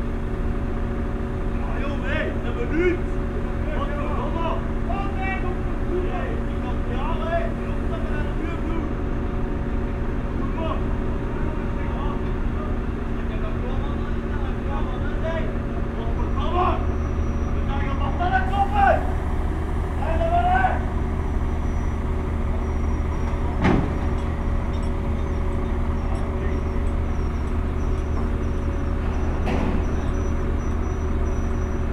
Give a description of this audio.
Workers are mounting a big crane with a gigantic Megamax mobile crane. After one minute, there's a big problem and the boss is shouting on the other workers.